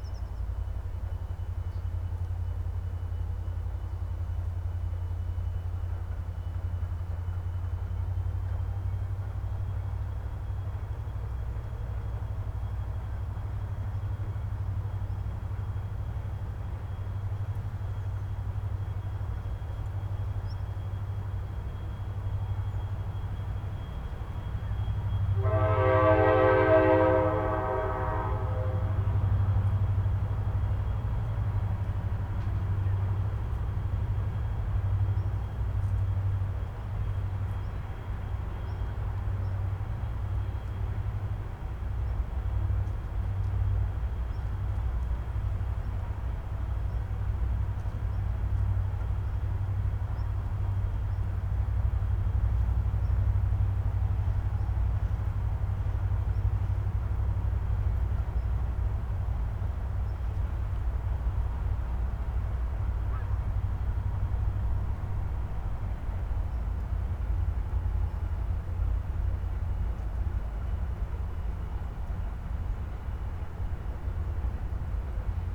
a sturdy freight train slithering about one kilometer away twined in bells of warning poles, echoed from slender apartment buildings located even further away. birds chirping here and there, a fly taking a breather on the microphone. recording rig a bit too noisy for recording of such quiet space and to pick up of the tumbling train in the distance.